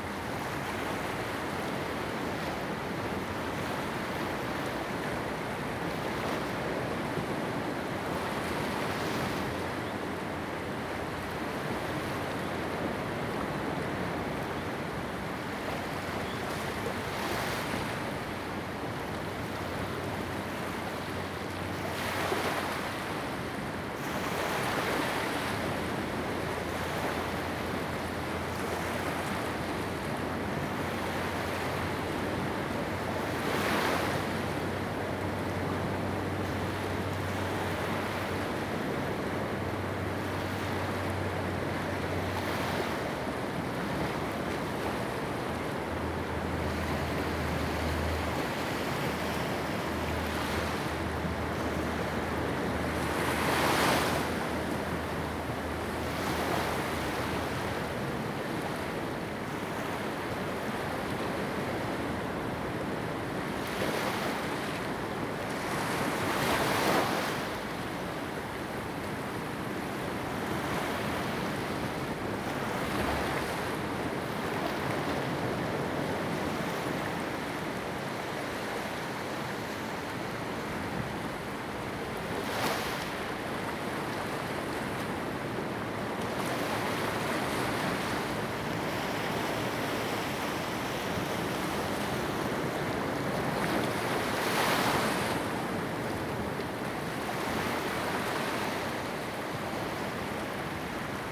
{"title": "石門區德茂里, New Taipei City - Sound of the waves", "date": "2016-04-17 06:33:00", "description": "at the seaside, Sound of the waves\nZoom H2n MS+XY", "latitude": "25.29", "longitude": "121.52", "altitude": "3", "timezone": "Asia/Taipei"}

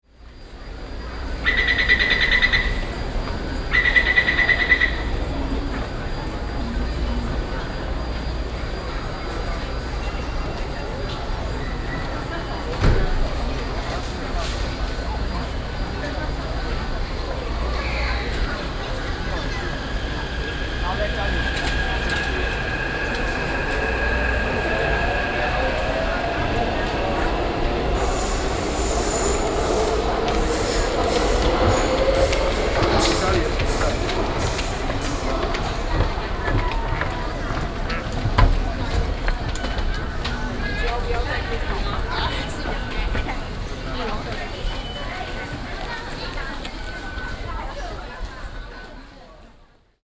{"title": "Gongguan Station, Taipei City - Gongguan MRT", "date": "2012-06-30 17:18:00", "description": "Walking in the Station, Binaural recordings", "latitude": "25.01", "longitude": "121.53", "altitude": "22", "timezone": "Asia/Taipei"}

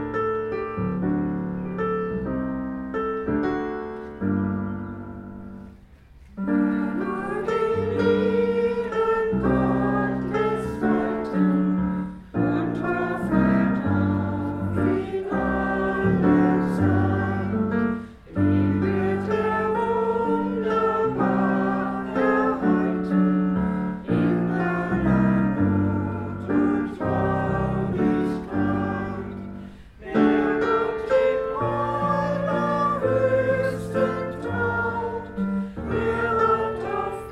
{"title": "Gießen, Deutschland - Andachtslied Lichtkirche", "date": "2014-06-13 12:30:00", "description": "The congregation, assembled from visitors, evident believers and surprise guests sing a church song.", "latitude": "50.59", "longitude": "8.69", "altitude": "160", "timezone": "Europe/Berlin"}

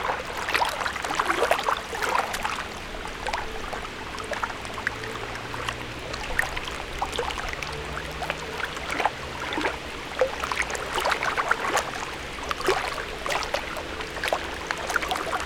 {"title": "Barragem, Miranda do Douro, Portugal - Barragem em Miranda do Douro", "date": "2011-05-16", "description": "Barragem de Miranda do Douro. Mapa Sonoro do rio Douro. Miranda do Douro, Power Plant. Douro River Sound Map", "latitude": "41.49", "longitude": "-6.26", "altitude": "528", "timezone": "Europe/Lisbon"}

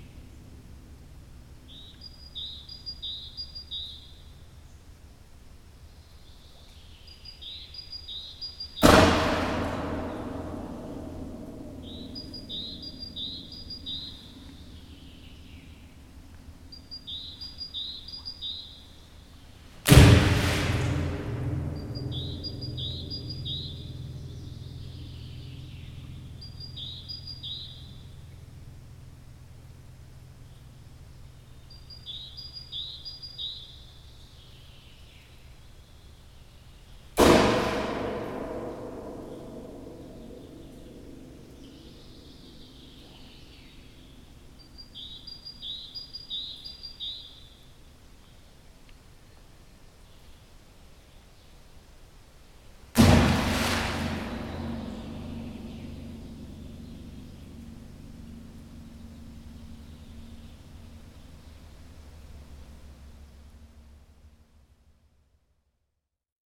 {"date": "2009-06-17 02:32:00", "description": "dropping stones into soviet missile silo: Valga Estonia", "latitude": "57.83", "longitude": "26.21", "altitude": "72", "timezone": "Europe/Tallinn"}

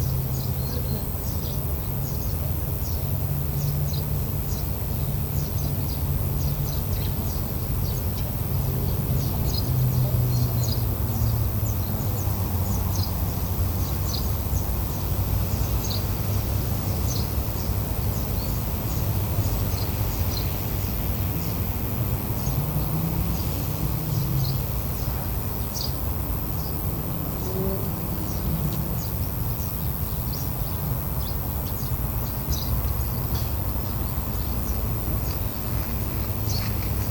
{"title": "Njegoševa ulica, Maribor, Slovenia - insects by the side of the road", "date": "2012-06-16 12:53:00", "description": "insects singing by the side of the dirt road on a hot, hot afternoon", "latitude": "46.57", "longitude": "15.63", "altitude": "277", "timezone": "Europe/Ljubljana"}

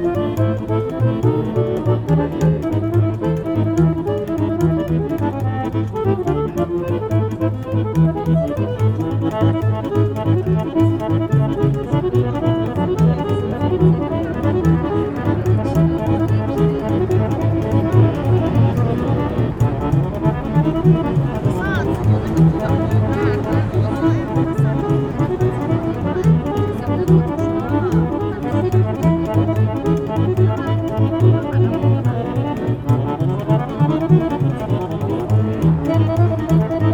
{"title": "Via dei Fori Imperiali, Roma RM, Italy - Street band", "date": "2018-02-16 18:20:00", "description": "Street band, pedestrians\nGroupe de rue, passants", "latitude": "41.89", "longitude": "12.48", "altitude": "22", "timezone": "GMT+1"}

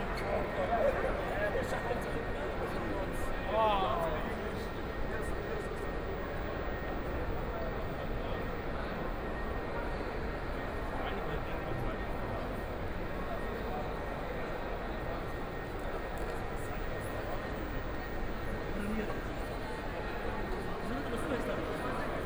{"title": "Hauptbahnhof, Munich 德國 - Walking in the station", "date": "2014-05-11 00:09:00", "description": "Walking in the Central Station at night, Walking in the station hall", "latitude": "48.14", "longitude": "11.56", "altitude": "524", "timezone": "Europe/Berlin"}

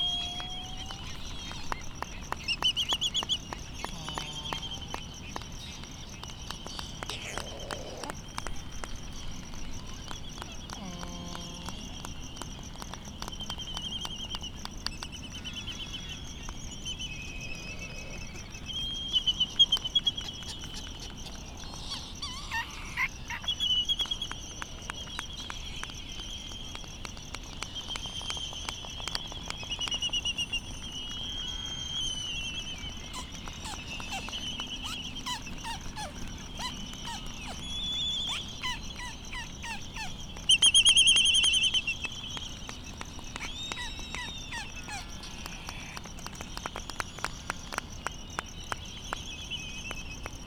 {"title": "United States Minor Outlying Islands - Laysan albatross and Bonin petrel soundscape ...", "date": "2012-03-14 03:58:00", "description": "Laysan albatross and Bonin petrel soundscape ... Sand Island ... Midway Atoll ... laysan calls and bill clapperings ... bonin calls and flight calls ... crickets ticking ... open lavalier mics ... warm ... blustery ...", "latitude": "28.22", "longitude": "-177.38", "altitude": "9", "timezone": "Pacific/Midway"}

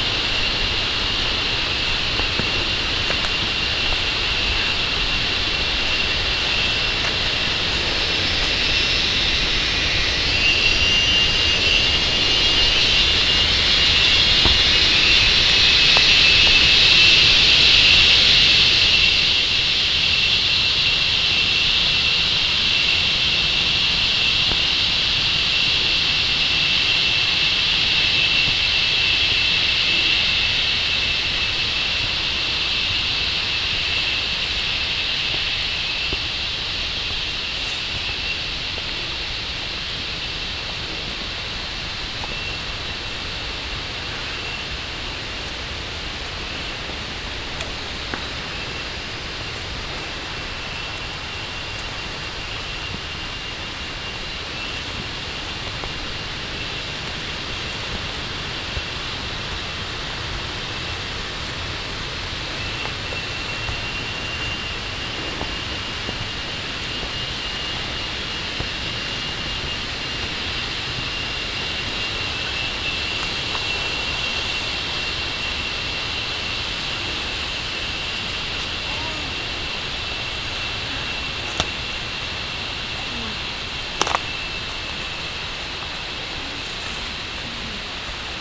{"title": "Unnamed Road, Tambon Mok Cham Pae, Amphoe Mueang Mae Hong Son, Chang Wat Mae Hong Son, Thailan - Mörderzikaden und Trecking mit Ben", "date": "2017-08-25 16:30:00", "description": "Killer cicadas, immensely shrieking, while trecking in the woods around Ban Huai Makhuea Som near the Myanmar border close to Mae Hong Son, Thailand. Ben is running an amazing refugees children school there, and offers informative and relaxing trecking tours.(theres another entry with this sound, it is wrongly located)", "latitude": "19.50", "longitude": "97.92", "altitude": "1236", "timezone": "Asia/Bangkok"}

